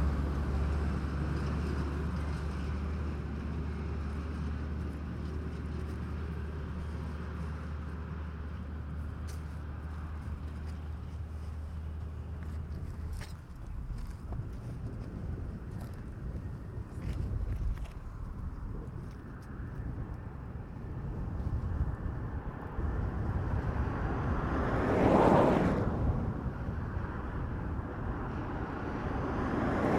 recorded during a soundArtWorkShop held by ludger hennig + rober rehnig @ GUC activityWeek 2012 with:
nissmah roshdy, amira el badry, amina shafik, sarah fouda, yomna farid, farah.saleh, alshiemaa rafik, yasmina reda, nermin mohab, nour abd elhameed
recording was made with:
2 x neumann km 184 (AB), sounddevice 722